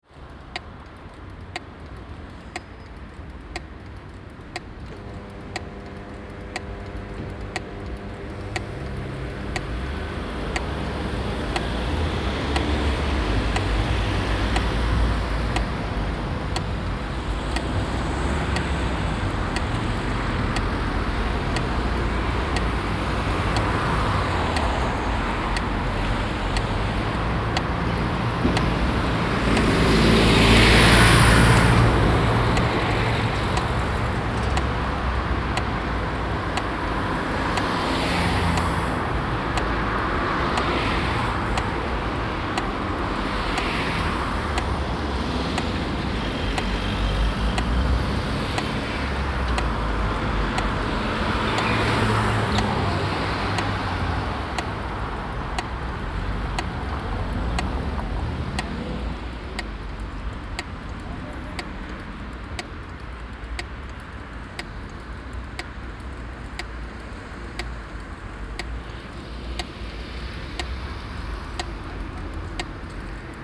Stoppenberg, Essen, Deutschland - essen, am schultenhof, traffic light sound signals
An einer Ampelanlage an einer Kreuzung. Das Klicken der Signalgeber für blinde Menschen und das sonore Brummen einer Vibrationsschaltung bei Grün im allgemeinen Verkehrstreiben.
At a traffic light at a crossing. The click sounds of the audible system for blind people and the sonorous hum of a vibration as th traffic light turns green sounding inside the overall traffic noise.
Projekt - Stadtklang//: Hörorte - topographic field recordings and social ambiences
29 April 2014, 11:30